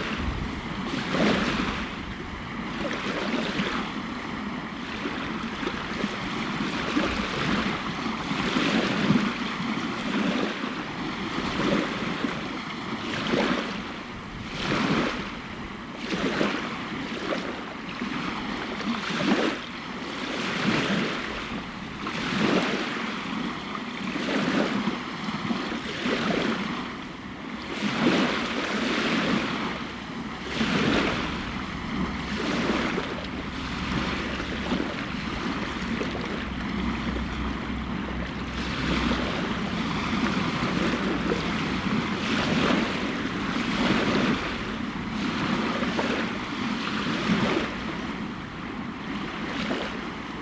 Rostrevor, Northern Ireland - Tide Going Out
Recorded with a pair of DPA 4060s and a Marantz PMD661
Newry, Newry and Mourne, UK, 20 February 2016